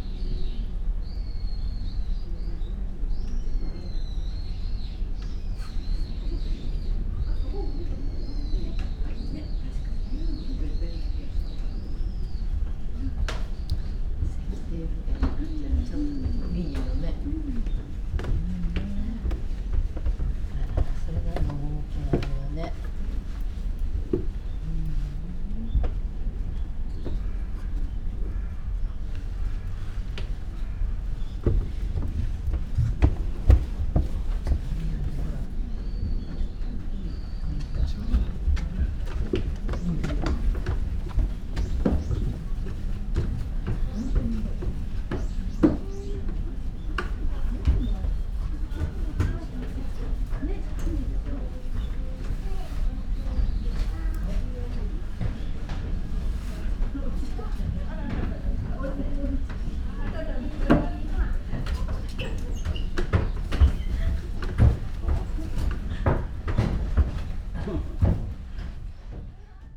garden, Chishakuin temple, Kyoto - rain, drops, crow, steps, murmur of people
gardens sonority
veranda, wooden floor, steps
Kyoto Prefecture, Japan, 1 November 2014